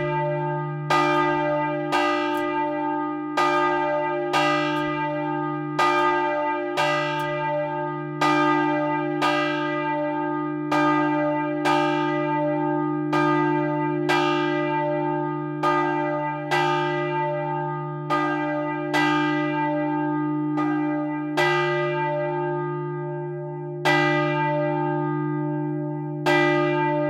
Rue du 13 Août, Tourouvre au Perche, France - Tourouvre au Perche - Église St-Aubin
Tourouvre au Perche (Orne)
Église St-Aubin
Volée cloche 1
France métropolitaine, France